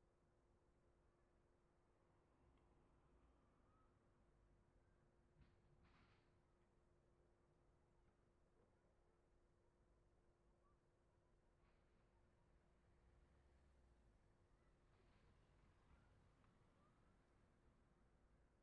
Eglise, Anglefort, France - Il est 18h
Sur un banc près de l'église d'Anglefort sonnerie de 18h, au loin un stade de skateboard et l'usine Ferropem .